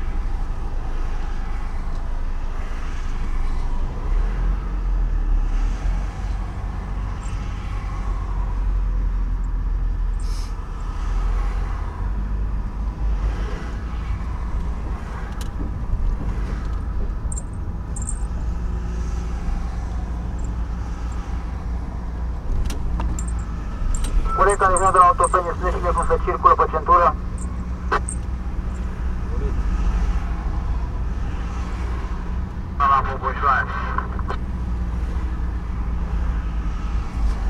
Chiajna, Romania - On the ring road with Ion
Given a ride by Ion, he is navigating his van along the patchwork of Bucharest's ring road
19 May 2016, 08:30